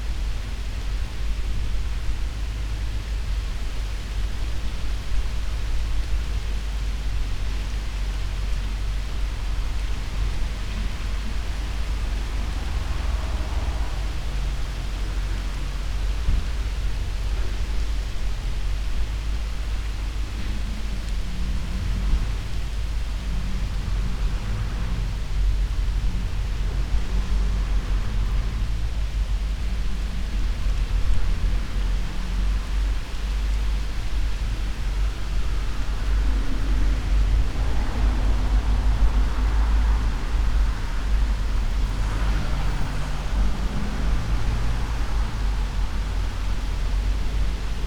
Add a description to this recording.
winds through poplar tree, cars and motorbikes traffic, no stopping, no brakes, no driving off, just abandoned houses with already visible decay ... everything seemingly fluid nowadays